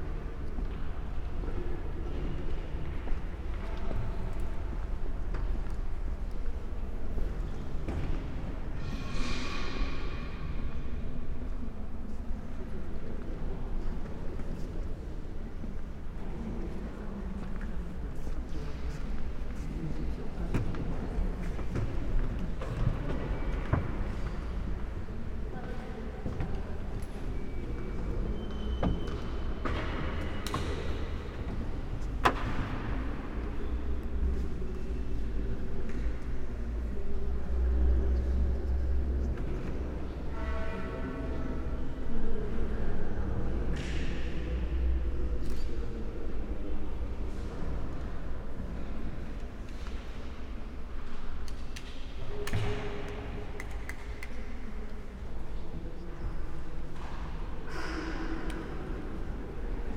Marienkirche, Berlin, Germany - nave
slow walk with few stops through the church